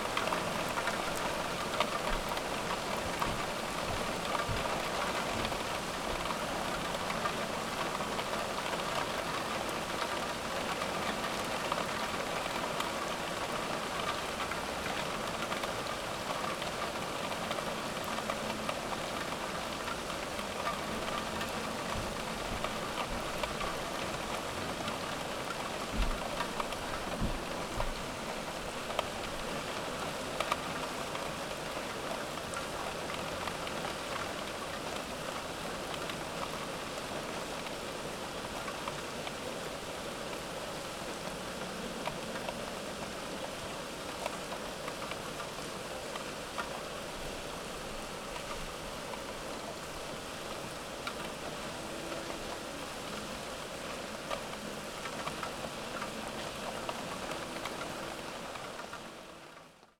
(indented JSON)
{
  "title": "Poznan, Jezyce district, Kochanowskiego - brick drops",
  "date": "2014-07-09 22:22:00",
  "description": "recording heavy rain through a narrow slit of ajar window. drops drumming on roof tiles.",
  "latitude": "52.41",
  "longitude": "16.91",
  "altitude": "77",
  "timezone": "Europe/Warsaw"
}